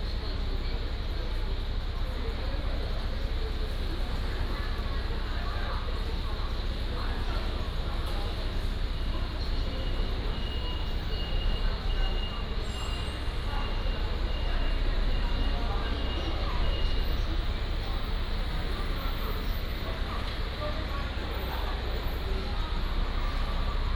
山外車站, Jinhu Township - At bus stop
At bus stop
金門縣 (Kinmen), 福建省, Mainland - Taiwan Border, 4 November 2014